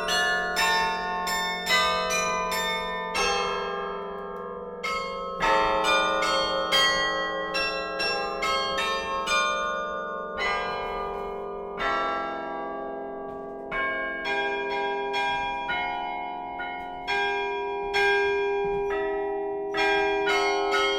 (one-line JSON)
{"title": "Huy, Belgique - Huy carillon", "date": "2010-01-24 10:30:00", "description": "The Huy carillon, a very old Hemony instrument, played by Gauthier Bernard. It's so cold he's playing with mittens.", "latitude": "50.52", "longitude": "5.24", "timezone": "Europe/Brussels"}